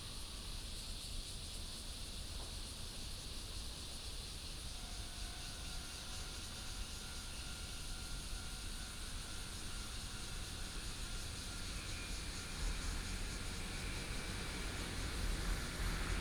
Min’an Rd., Yangmei Dist. - Evening
Cicada sound, train runs through, Traffic sound, Insects sound